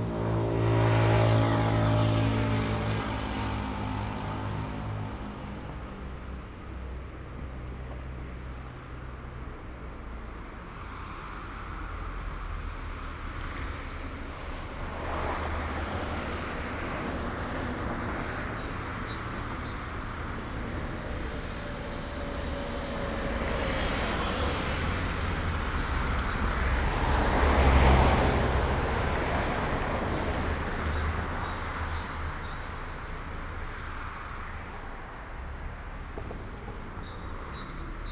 Ascolto il tuo cuore, città. I listen to your heart, city. Several chapters **SCROLL DOWN FOR ALL RECORDINGS** - Marché en plein air le samedi après-midi aux temps du COVID19 Soundwalk
"Marché en plein air le samedi après-midi aux temps du COVID19" Soundwalk
Saturday March 28th 2020. Walking San Salvario district and crossing the open-air market of Piazza Madama Cristina
Eighteen days after emergency disposition due to the epidemic of COVID19.
Start at 3:03 p.m. end at 3:35 p.m. duration of recording 31'34''
The entire path is associated with a synchronized GPS track recorded in the (kmz, kml, gpx) files downloadable here: